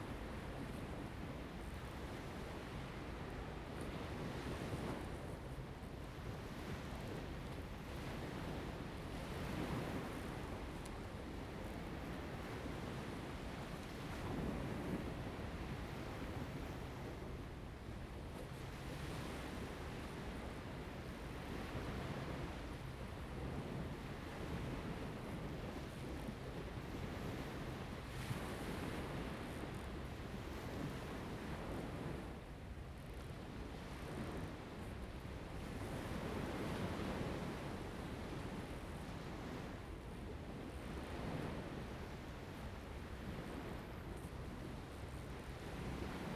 湖井頭, Lieyu Township - At the beach
At the beach, Sound of the waves, Birds singing
Zoom H2n MS +XY